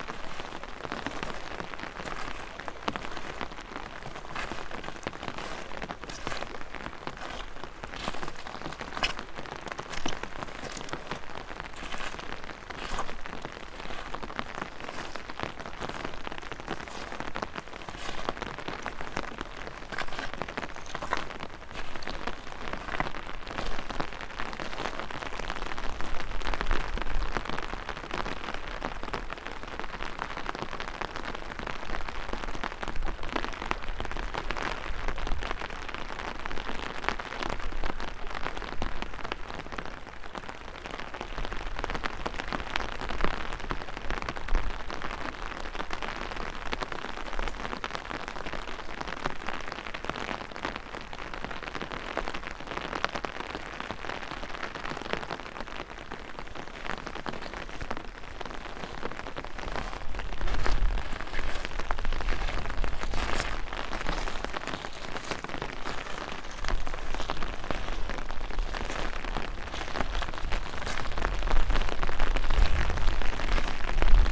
river Drava, Loka - walking, excavated gravel
rain drops, umbrella, flow of river water